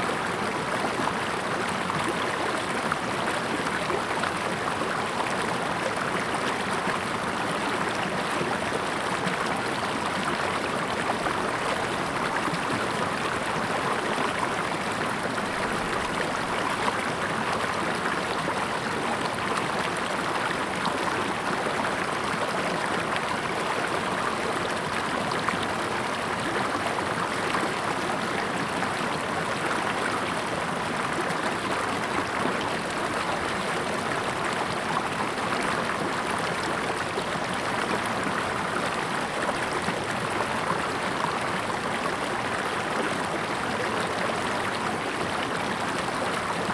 種瓜坑溪, 成功里, Puli Township - Brook
Brook
Zoom H2n MS+XY